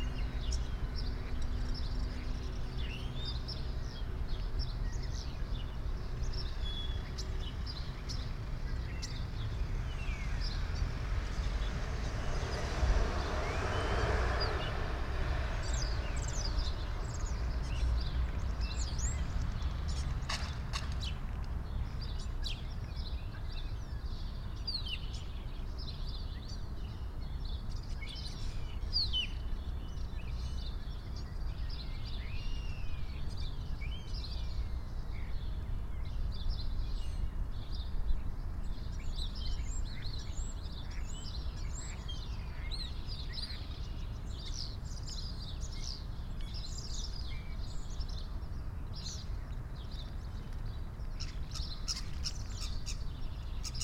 Early Morning Recording / Birds on a Rooftop - Garden President Brussels Hotel
Brussels North
Urban Sonic Environment Pandemic
24 March 2020, 06:43